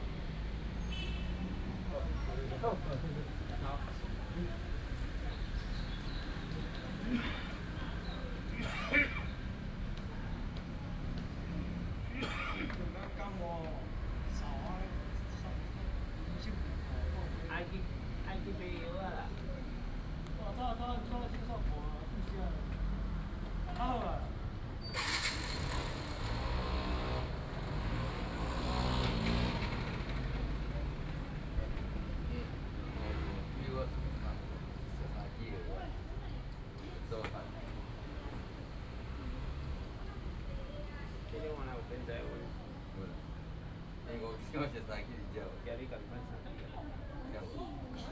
敦親公園, Da'an Dist. - Hot weather
Group of elderly people in the park, Hot weather
Da’an District, Taipei City, Taiwan